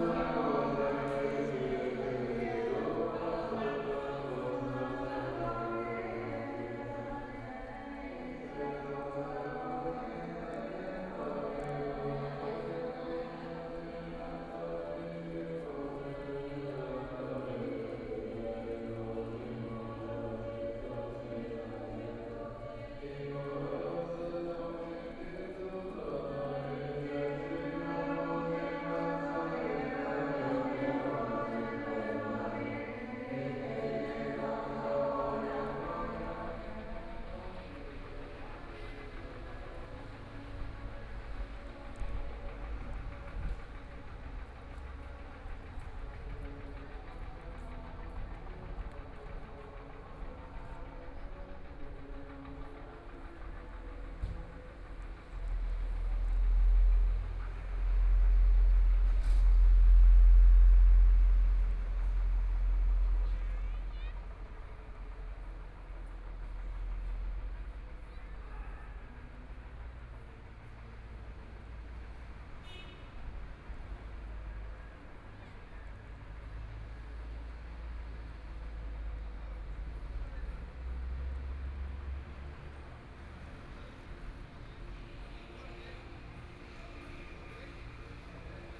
Taitung stadium, Taitung City - Buddhist Puja chanting voice
Walk inside and outside the stadium, Buddhist Puja chanting voice, Binaural recordings, Zoom H4n+ Soundman OKM II